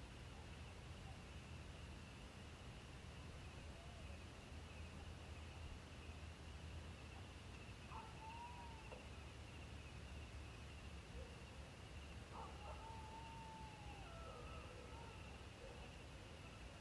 Unnamed Road, Chiquinquirá, Boyacá, Colombia - Quiet environments
Cascajal in the village of Córdova Bajo in the city of Chiquinquirá, Boyacá, Colombia. Rural area - land where a quarry was abandoned long ago for not complying with environmental licenses. Place of ascent by road to 6 km of the city.